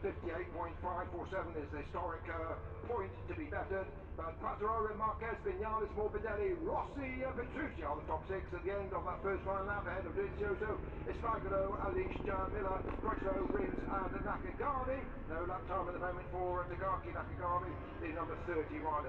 Silverstone Circuit, Towcester, UK - british motor cycle grand prix 2019 ... moto grand prix ... q2 ...
british motor cycle grand prix 2019 ... moto grand prix qualifying two ... and commentary ... copse corner ... lavalier mics clipped to sandwich box ...
England, UK, 24 August 2019, ~15:00